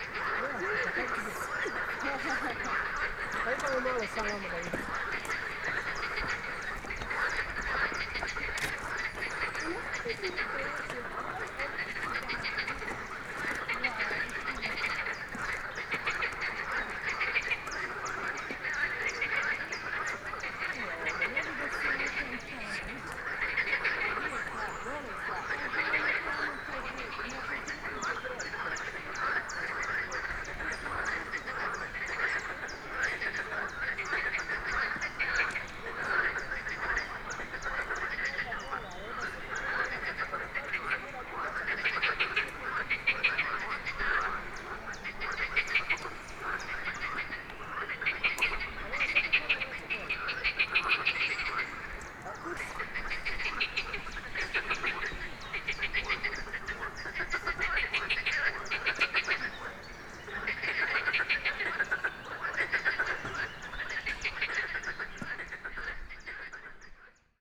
Croatia, Plitvička Jezera - frogs plitvička jezera national park
lots of frogs at a lake in plitviĉka jezera national park.